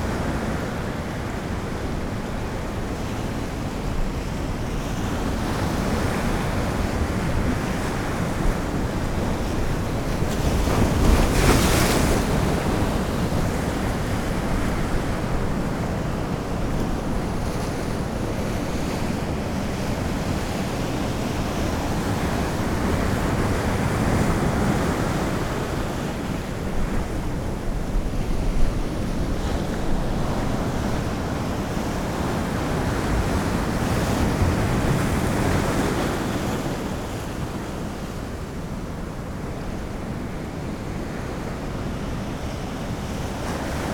Whitby, UK - high tide ...
high tide ... lavaliers clipped to sandwich box ... bird calls from ... redshank ... rock pipit ... oystercatcher ... black-headed gull ... herring gull ...